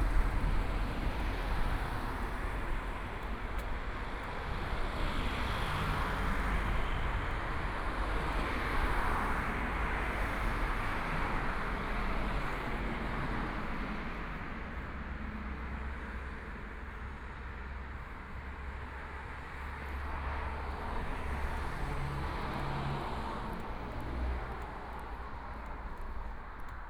Schwanthalerstraße, Munich 德國 - walking in the Street
Walking the streets late at night, Traffic Sound